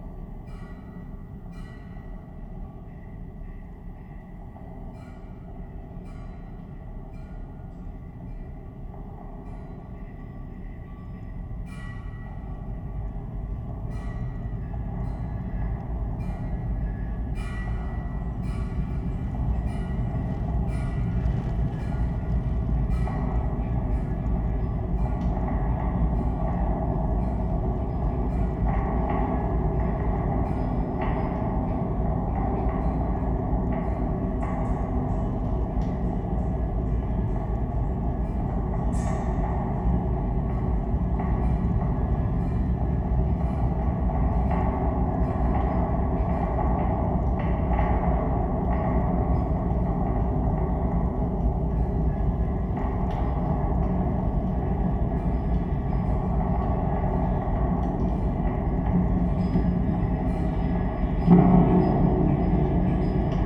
Old suspension bridge, Fort Calgary Park mainstays
mainstay cables of the small pedestrian suspension bridge recorded with contact mics